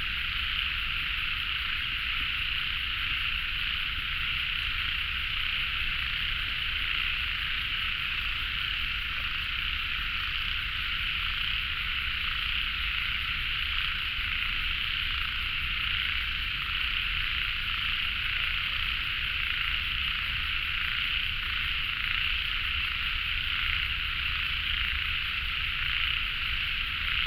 Traffic Sound, Environmental sounds, Birdsong, Frogs
Binaural recordings
Beitou District, 關渡防潮堤, 17 March 2014, 18:56